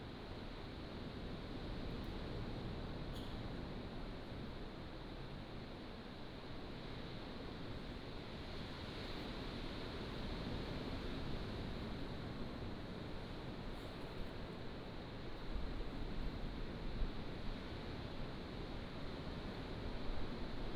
Lüdao Township, Taitung County - Inside the cave
Inside the cave, Sound of the waves